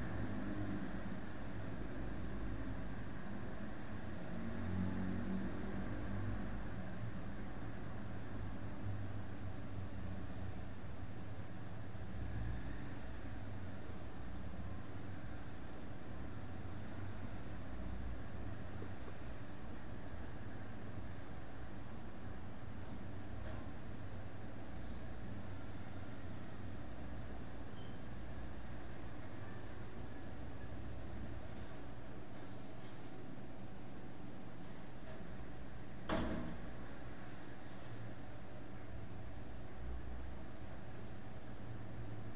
Recorded in the morning, with a cellphone in the interior of an apartment, there is a constant kind of hiss coming from a pc tower. Still, you can hear the characteristic sound of airplanes, people, and cars